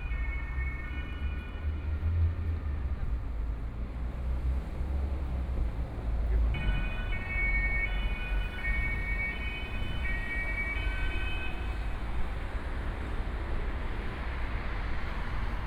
Ludwigstraße, Munich 德國 - Police car
Police car, Traffic Sound